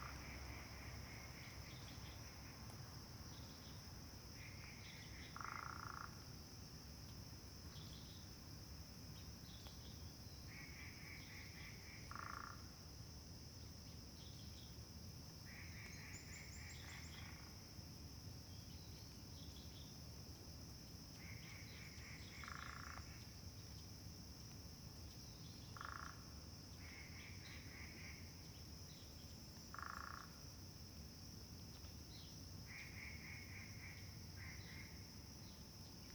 水上巷, Puli Township, Nantou County - In the bamboo forest
In the bamboo forest, Bird sounds, Traffic Sound
Zoom H2n MS+XY
21 April 2016